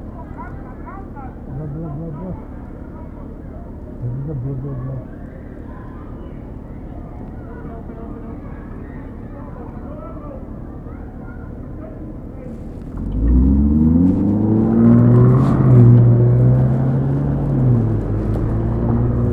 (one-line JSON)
{"title": "Stadhuisplein, Zaandam, Netherlands - Markt Zaandam", "date": "2021-06-22 11:31:00", "description": "Markt in Zaandam.", "latitude": "52.44", "longitude": "4.82", "altitude": "6", "timezone": "Europe/Amsterdam"}